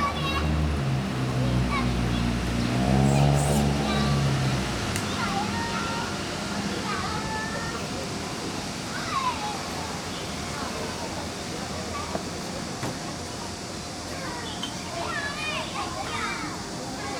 玫瑰公園, Banqiao Dist., New Taipei City - walking in the Park
walking in the Park, Children Playground, Basketball court
Sony Hi-MD MZ-RH1 +Sony ECM-MS907